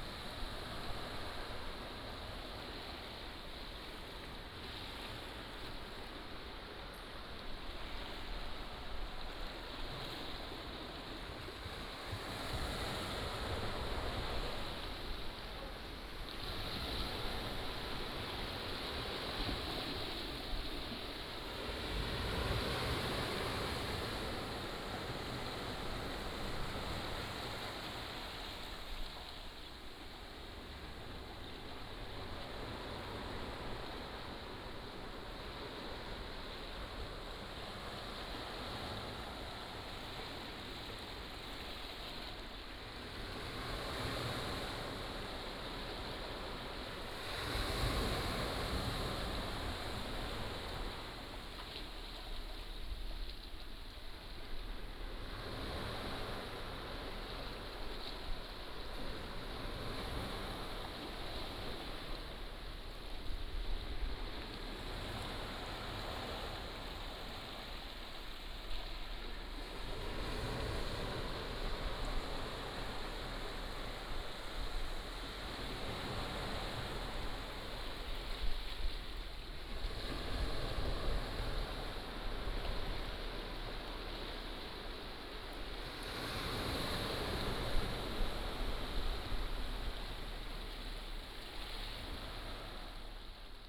牛角港, Nangan Township - sound of the waves
Small port, sound of the waves